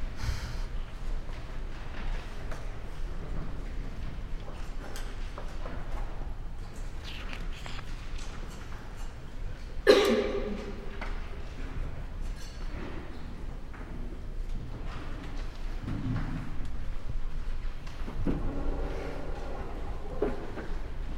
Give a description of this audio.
sounds of ”silentio! spaces: wooden floor, chairs, desks, pencils, books, papers, steps, automatic door ...